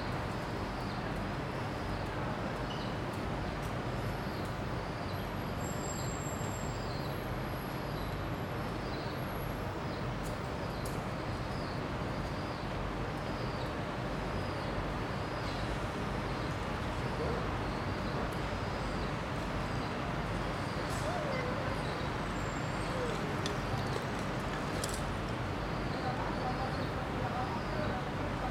Gare du Midi, Saint-Gilles, Belgique - Platform 3b ambience
Synthetic voices for trains announcement, conversations on the platform, birqs nesting in the steel structure.
Tech Note : Sony PCM-D100 internal microphones, wide position.
Région de Bruxelles-Capitale - Brussels Hoofdstedelijk Gewest, België / Belgique / Belgien, 27 July